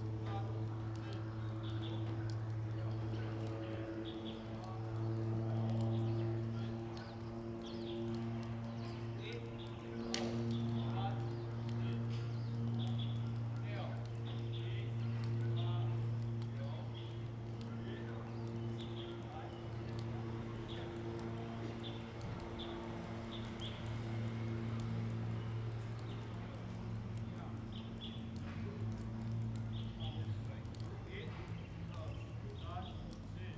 金峰鄉介達國小, Taitung County - playground
Bird sound, playground, Elementary school student, Physical education class, Dog barking, Water droplets, Small aircraft in the distance
Zoom H2n MS+XY